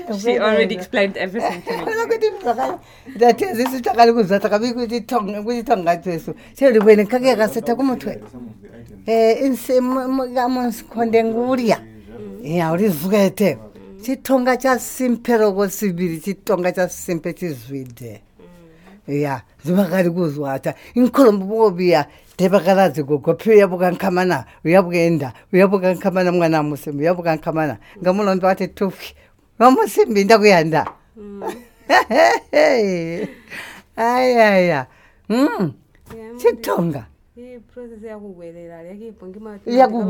BaTonga Museum, Binga, Zimbabwe - Janet and Luyando
Luyando talks to her aunt Janet about female initiation rights in front of related artifacts at the BaTonga Museum in Binga... Janet responds with a song... (in ChiTonga with summary translations)